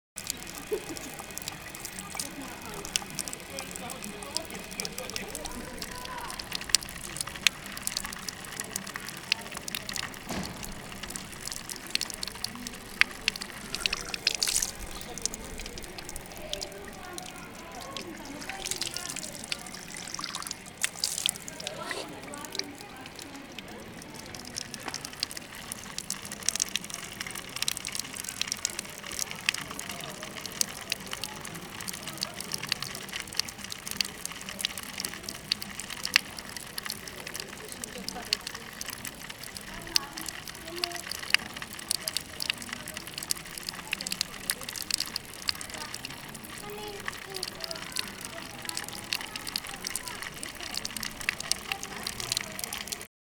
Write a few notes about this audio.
Koryto Ulica Szeroki Dunaj, Warszawa